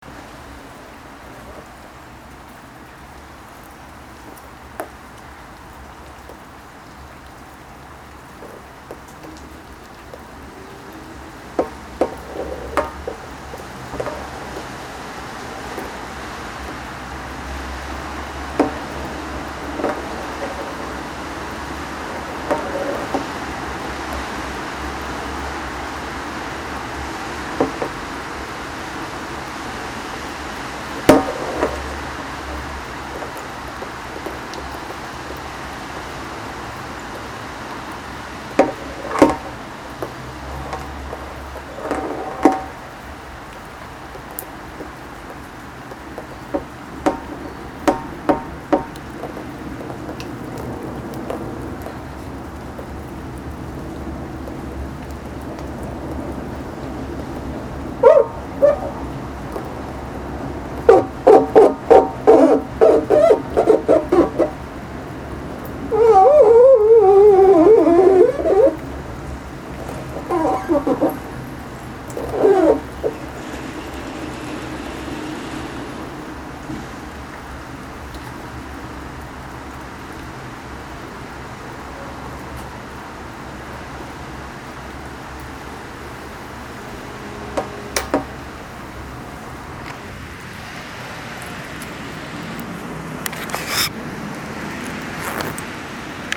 Milano, Italy - into the plastic slide
recorder put into a plastic tube, used as a slide in the amusement park for children. raining outside, recording sounds of rain and of myself when dabbing on the tube with fingers.